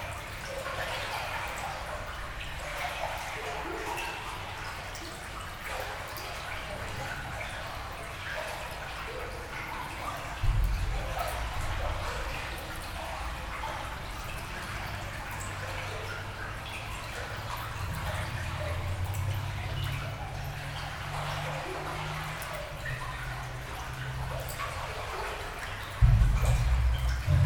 Valenciennes, France - Underground river
The Rhonelle underground river, below the Valenciennes city. Distant sounds of the connected sewers, rejecting dirty water into the river.
24 December 2018